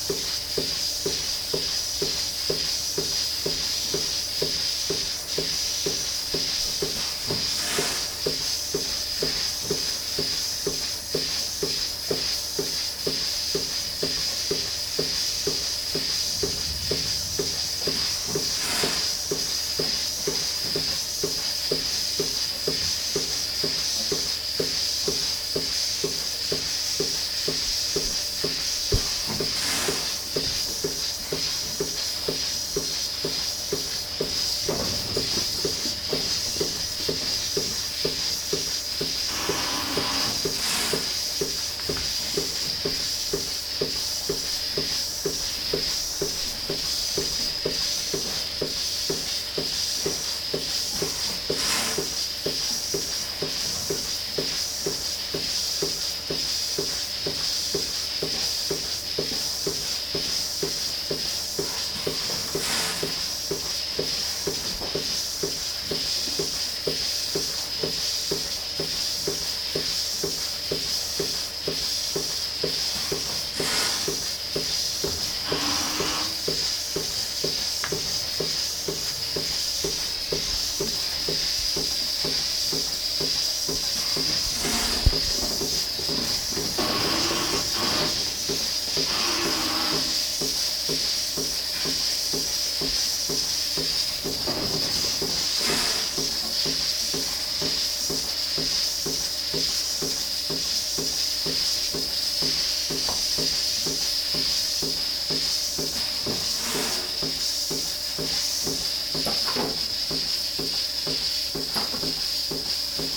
Halenfeld, Buchet, Deutschland - Eine Kuh wird automatisch gemolken /
Eine Kuh steht im Melkroboter und wird um 11 Liter Milch erleichtert.
One cow in the milking robot be pumped 11 liters of milk.